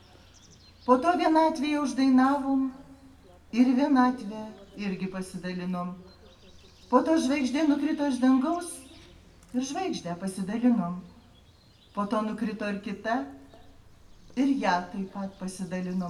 the churchyard. poets reading their works. international poetry festival.